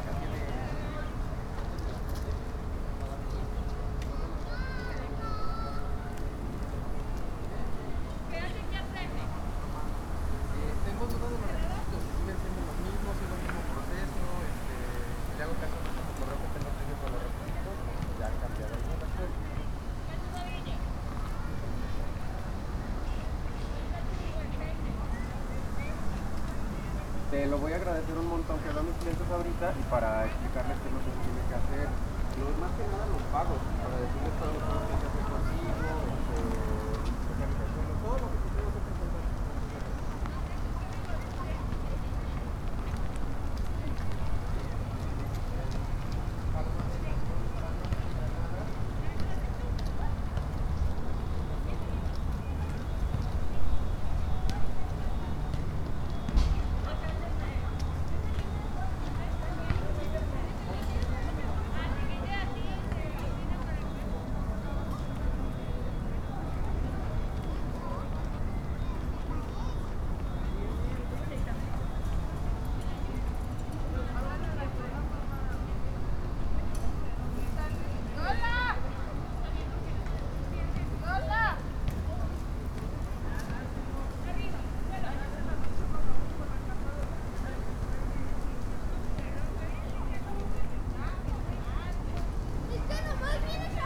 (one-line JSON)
{"title": "P.º de Los Quetzales, San Isidro, León, Gto., Mexico - A Wednesday afternoon at San Isidro Park.", "date": "2021-08-25 19:11:00", "description": "I made this recording on August 25th, 2021, at 7:11 p.m.\nI used a Tascam DR-05X with its built-in microphones and a Tascam WS-11 windshield.\nOriginal Recording:\nType: Stereo\nUn miércoles por la tarde en el Parque San Isidro.\nEsta grabación la hice el 25 de agosto de 2021 a las 19:11 horas.", "latitude": "21.10", "longitude": "-101.65", "altitude": "1801", "timezone": "America/Mexico_City"}